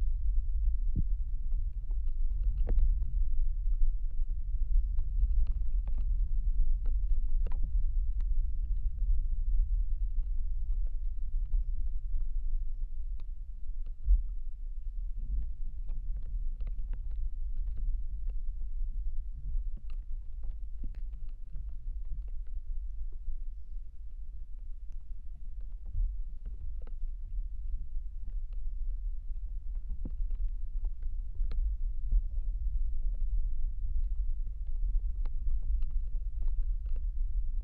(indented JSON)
{
  "title": "Utena, Lithuania, dried hops",
  "date": "2019-02-04 16:15:00",
  "description": "winter. mild wind. contact mics on a wild dried hops",
  "latitude": "55.52",
  "longitude": "25.58",
  "altitude": "107",
  "timezone": "Europe/Vilnius"
}